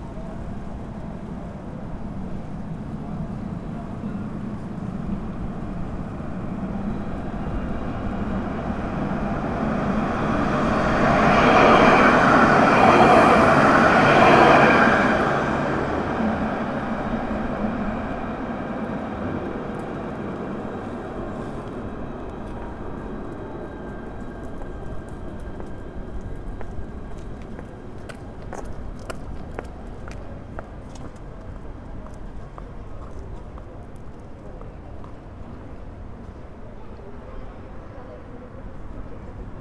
Rue de Siam, Brest, France - Brest tramway passing
Modern tramway, passing, pedestrians
Passage d’un tramway moderne, piétons